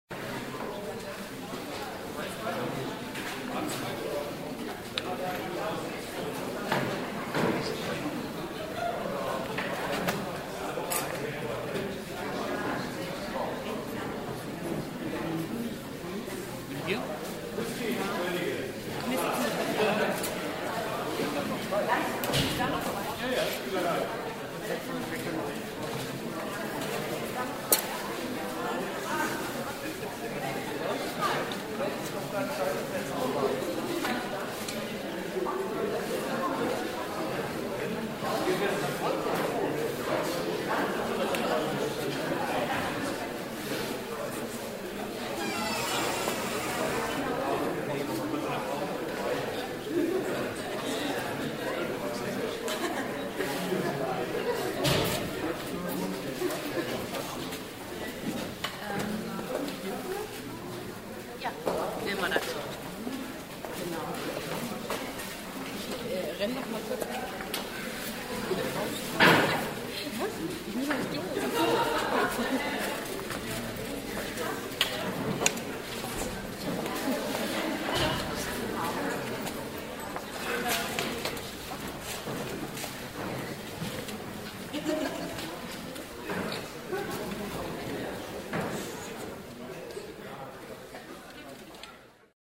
cologne, orangerie im volksgarten
publikum vor einer auffuehrung
project: social ambiences/ listen to the people - in & outdoor nearfield recordings